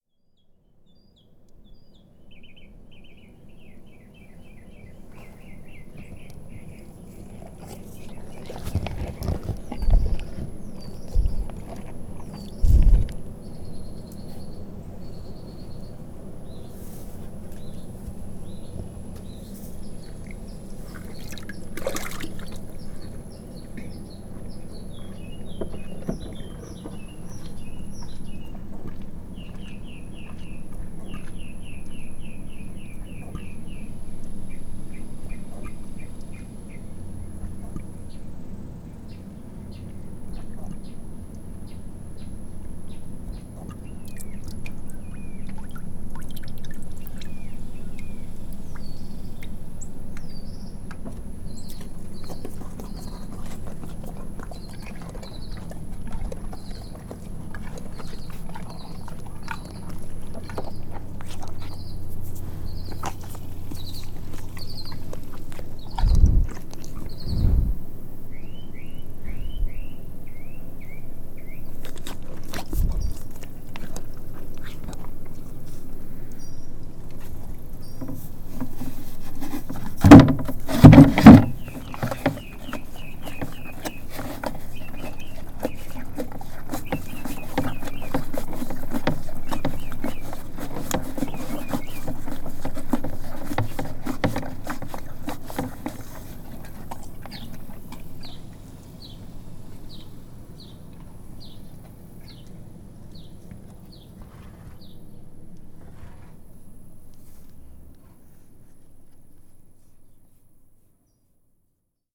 Befriending a horse at the blueberry farm, Hockley, Texas - Horse at Chmielewski’s Blueberry Farm
Horse drinks, swallows, licks the salt lick, investigates mics and is otherwise awesome. Cicadas, other bugs, numerous birds, airplane traffic
Church Audio CA-14 omnis on sunglasses, dangling (not prepared that day) > Tascam DR100 MK2
Hockley, TX, USA, 29 May, 02:59